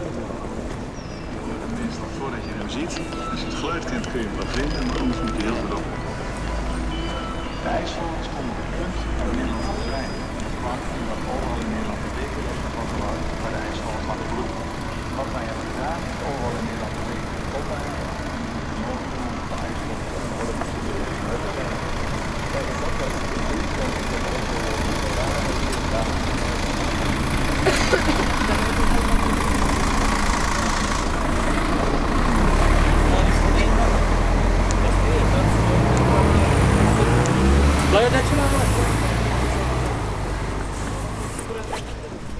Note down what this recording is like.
starting from the staircases of the underground railway platform, to the arrival hall. exit outside on to the square and heading into the direction of the bus stops & taxistands.